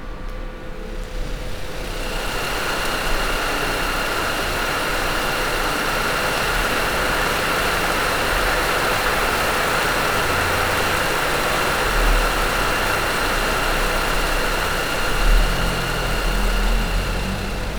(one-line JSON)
{"title": "Umeå, Verkligheten, sound installation", "date": "2011-05-26 13:57:00", "description": "Sound installation at Ljud 11-Klang Elf-Sound Eleven - Verkligheten gallery exhibition 13.05-27.05.2011", "latitude": "63.82", "longitude": "20.28", "altitude": "23", "timezone": "Europe/Stockholm"}